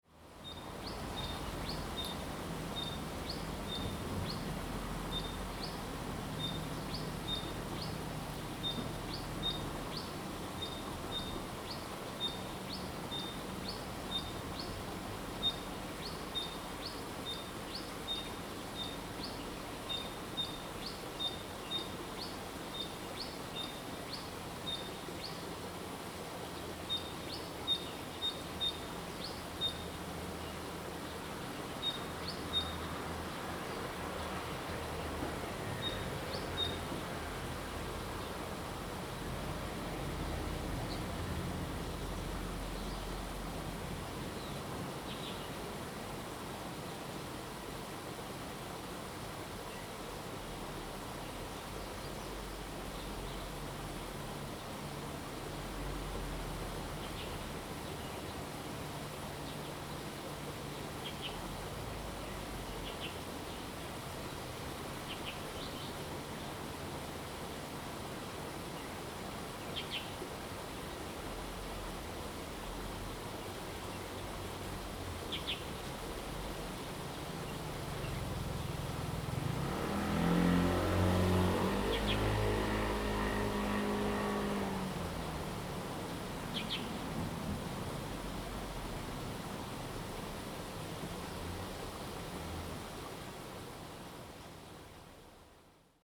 桃米橋, 桃米里 Puli Township - Next to the stream
Bird calls, Next to the stream
Zoom H2n MS+XY
Nantou County, Puli Township, 桃米巷68號, 30 April 2015, 13:28